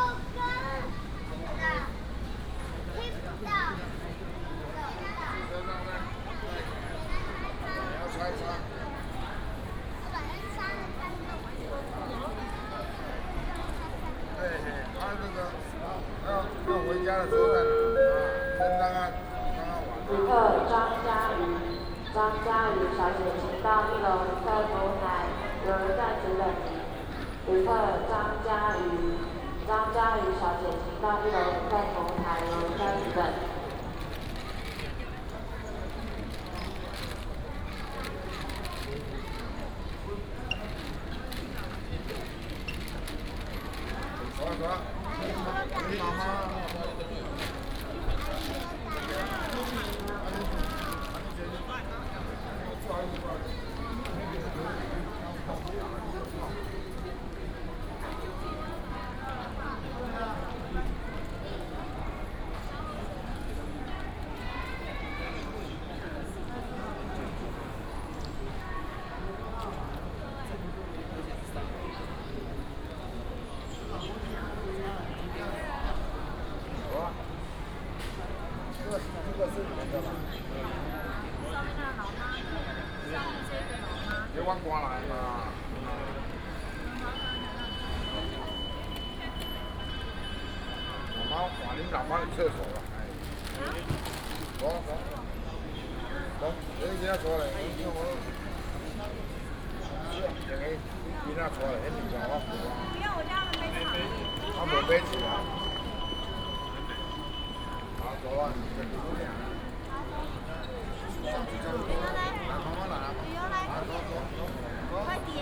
THSR Hsinchu Station, 竹北市 - Walk in the station hall
Walk in the station hall, Station information broadcast
Zhubei City, 高鐵七路6號